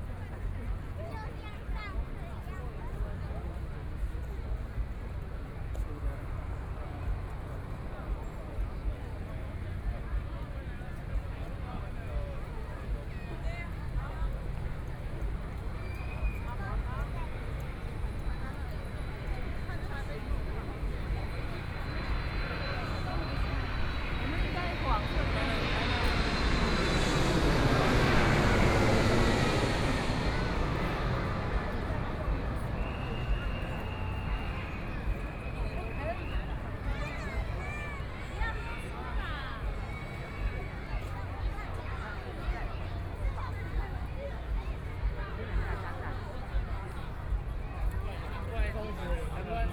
Taipei EXPO Park - First Full Moon Festival
First Full Moon Festival, Walking through the park, Many tourists, Aircraft flying through, Traffic Sound
Binaural recordings, Please turn up the volume a little
Zoom H4n+ Soundman OKM II
Zhongshan District, Taipei City, Taiwan, 16 February 2014